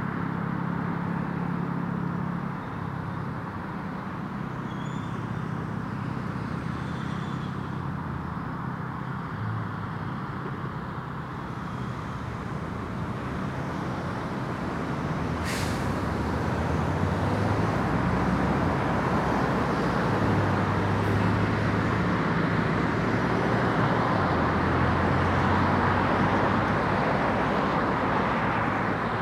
The Drive High Street Great North Road
A cold mist in still air
Pulse of traffic
clang of gate
walkers runners dogs
North East England, England, United Kingdom